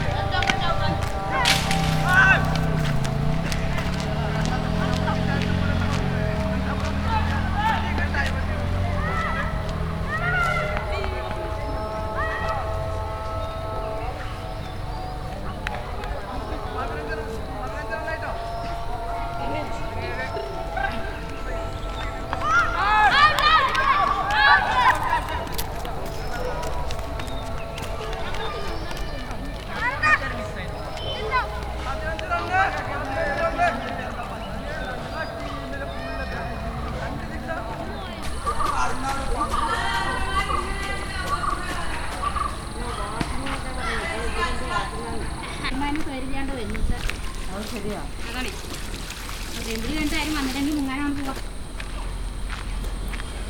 Chethalloor, Kerala, Indien - Cricket being played next to an ancient indian bath
A dry grass field, boys playing cricket, chanting songs from the nearby Shiva- and Kali Temple, a motorbike starting and driving through the playground, a man and than later a women pass by the path in which i am recording next to. When I turn around there is a huge rectangle basin with stairs leading down towards the water on one side and a washing house for women to hide themselves while bathing. Two women washing there clothes besides the washing house.